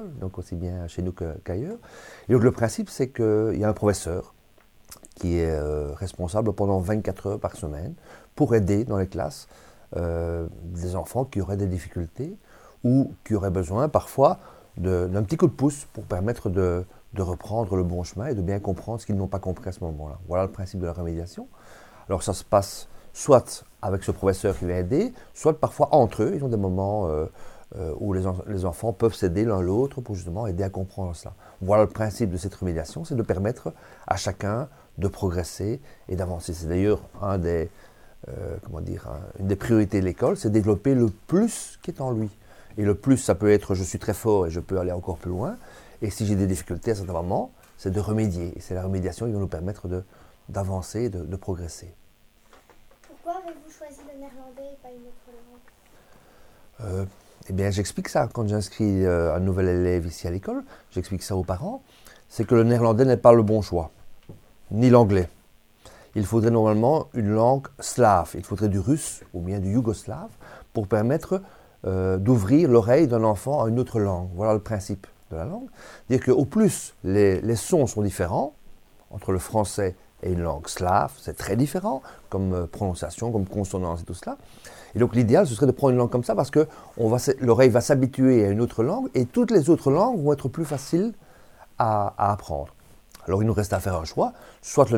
Court-St.-Étienne, Belgique - The school director
The school director is talking about his school to children and he explains what is Nonviolent Communication. This moment is recorded by children (6-8 years).